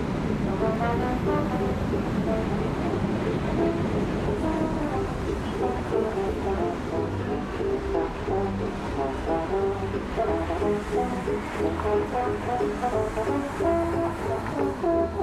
U Eberswalder Str. (Berlin), Berlin, Deutschland - Strasssenmusiker U-Bahn Eberswalder Straße
Zwei Strassenmusiker am Eingang zur U-Bahnhaltestelle Eberswalder Straße
11 October 2019, 20:00